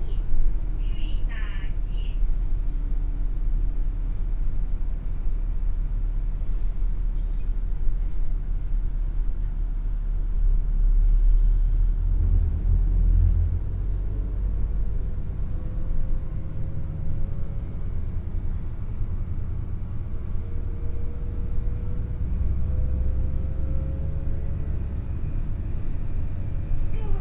Take the bus, In the bus, lunar New Year, Bus message broadcast sound
Binaural recordings, Sony PCM D100+ Soundman OKM II